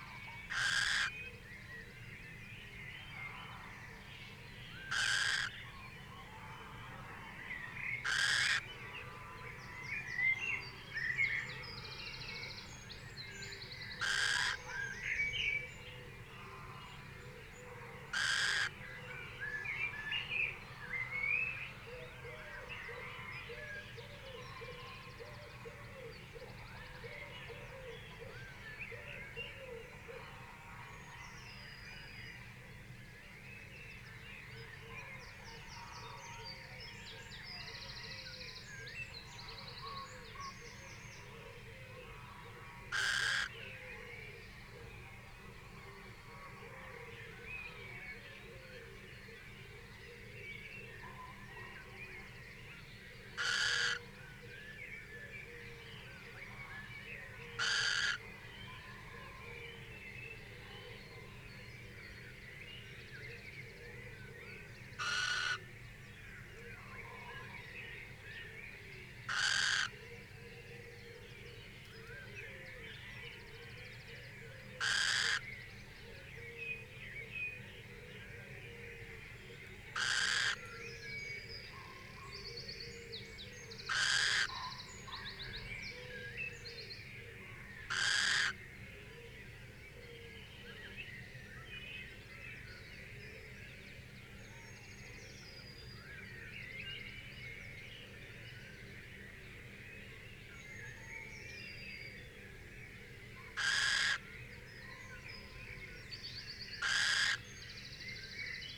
Unnamed Road, Malton, UK - little owl nest site ... close to ...
little owl nest site ... close to ... pre-amped mics in SASS ... bird calls ... song from ... blackbird ... pheasant ... wood pigeon ... wren ... collared dove ... blue tit ... great tit ... red-legged partridge ... song thrush ... chaffinch ... dunnock ... crow ... male arrives at 25:30 and the pair call together till end of track ... plenty of space between the calls